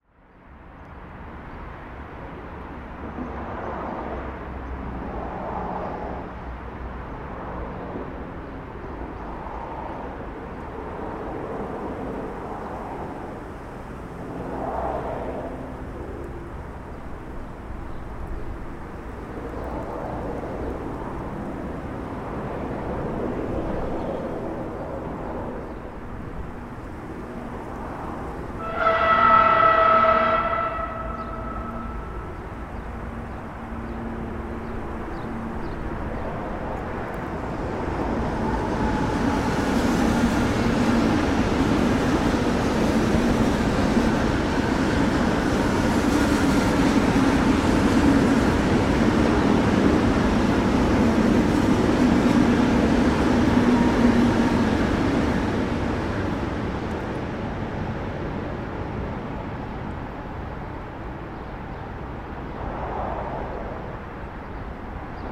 train and car traffic, Muggenhof/Nuremberg
cut effect heard from car traffic on a sunken road, Muggenhof